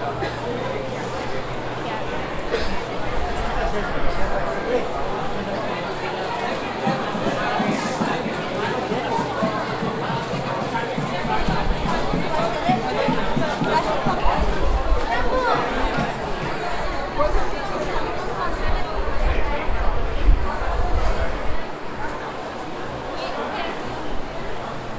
{
  "title": "Rua 25 de Março - Centro, São Paulo - SP, 01021-200, Brasil - 25 de Março",
  "date": "2019-04-13 15:00:00",
  "description": "Gravação realizada na rua 25 de Março, maior centro comercial da América Latina.",
  "latitude": "-23.54",
  "longitude": "-46.63",
  "altitude": "750",
  "timezone": "GMT+1"
}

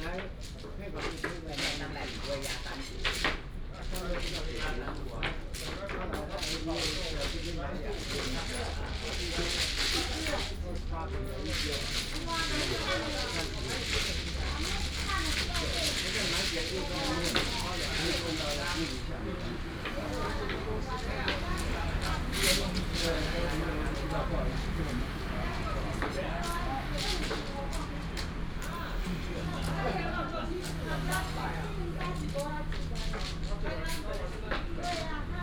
{
  "title": "金城二路, East Dist., Hsinchu City - Mahjong",
  "date": "2017-09-12 10:23:00",
  "description": "A group of people are playing mahjong, traffic sound, Binaural recordings, Sony PCM D100+ Soundman OKM II",
  "latitude": "24.80",
  "longitude": "121.00",
  "altitude": "57",
  "timezone": "Asia/Taipei"
}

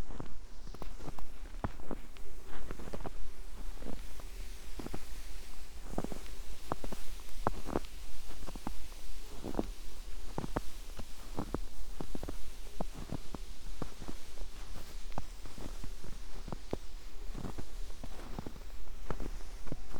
{
  "title": "path of seasons, Piramida, Maribor - paper, steps, snow",
  "date": "2014-01-28 17:11:00",
  "latitude": "46.57",
  "longitude": "15.65",
  "timezone": "Europe/Ljubljana"
}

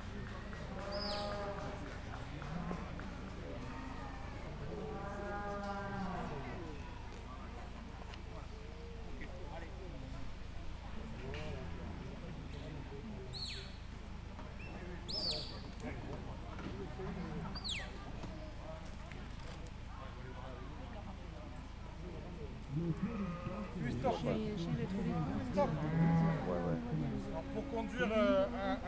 {
  "title": "Nages Maison de Payrac",
  "date": "2011-08-13 11:09:00",
  "description": "Fête paysanne Maison de Payrac, démonstration de travail de Border, chiens de troupeaux.",
  "latitude": "43.68",
  "longitude": "2.77",
  "altitude": "1041",
  "timezone": "Europe/Paris"
}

